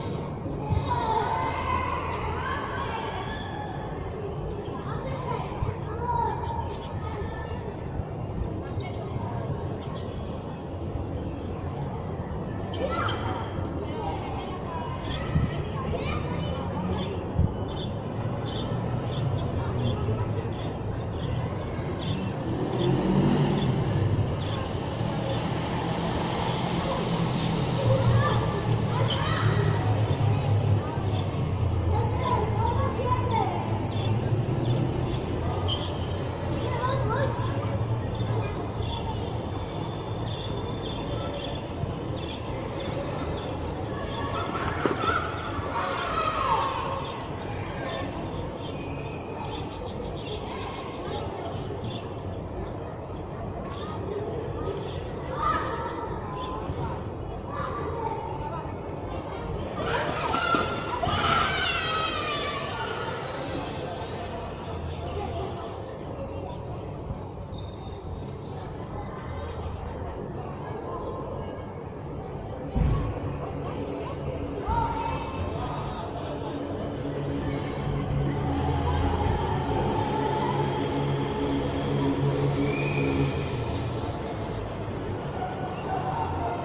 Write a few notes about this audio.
niños jugando en plaza, gallo cantando, musica bar latino, grabadora movil jiayu g4s, Children playing in a small square near the mountain, meanwhile a rooster cry nearby and test of a latin bar. recorded with a jiayu g4s movil